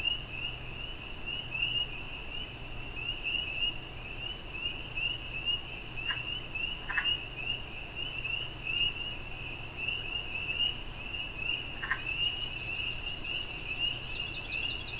{
  "title": "Warbler's Roost, Ontario, Canada - Spring Peepers, Tree Frogs and Loons",
  "date": "2020-05-02 03:30:00",
  "description": "Sring Peepers, Tree Frogs and Loons recorded at 3:30 am on balcony of the inn at Warbler's Roost. Sounds recorded approximately 500 feet from Commanda Creak and 1000 feet from Deer Lake. Sound recording made during the Reveil broadcast of dawn chorus soundscapes for 2020. Recorded with pair of DPA 4060 microphones in a boundary configuration.",
  "latitude": "45.82",
  "longitude": "-79.58",
  "altitude": "337",
  "timezone": "America/Toronto"
}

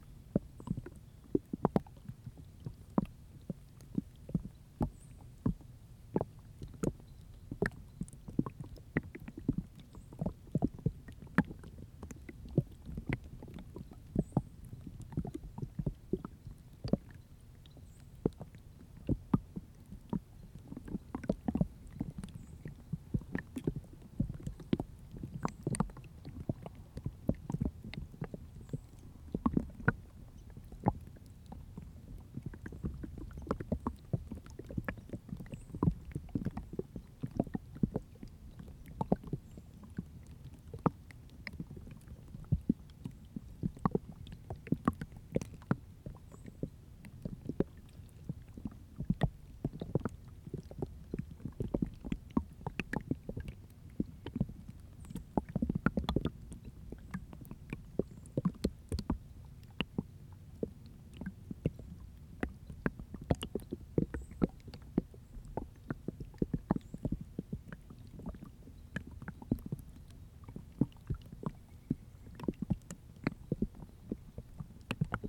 {
  "title": "Greentree Park Creek Ice, Kirkwood, Missouri, USA - Greentree Park Creek Ice",
  "date": "2021-02-07 15:52:00",
  "description": "Dual MS recording of creek flowing over rocks and under ice combined with hydrophone recording from ice. Some birds chime in at 26 secs.",
  "latitude": "38.56",
  "longitude": "-90.45",
  "altitude": "125",
  "timezone": "America/Chicago"
}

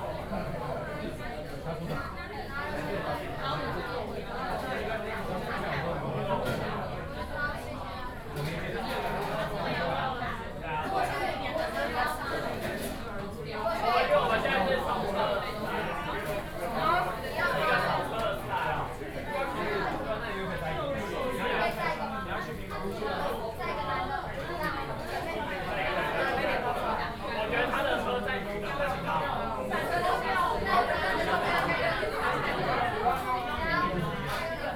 {
  "title": "Gongguan, Taipei - In the restaurant",
  "date": "2013-05-08 14:32:00",
  "description": "In the restaurant, Sony PCM D50 + Soundman OKM II",
  "latitude": "25.02",
  "longitude": "121.53",
  "altitude": "21",
  "timezone": "Asia/Taipei"
}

